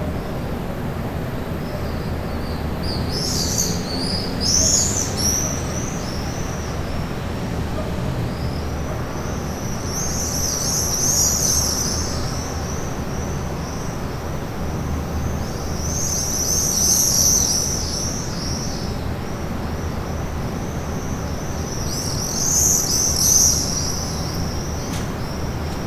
Anapa. - Morning symphony. Anapa Lazurnaya hotel.
Fourth-floor balcony. Sunny morning.
Tech.: Sony ECM-MS2 -> Tascam DR-680.
7 August 2013, 07:35